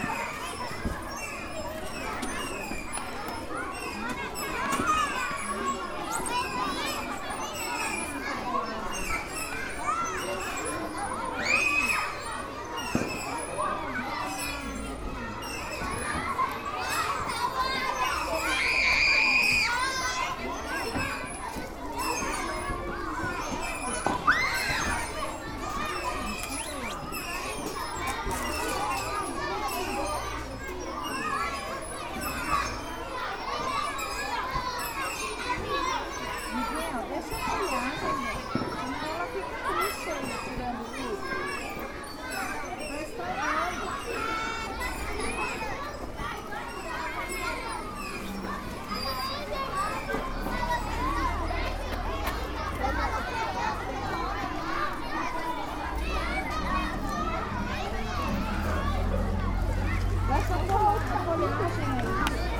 Crianças brincando no parquinho da escola Raul Pila durante o intervalo. Gravação realizada por alunos do 4o ano da EEI Raul Pila com um gravador digital TASCAM DR 05.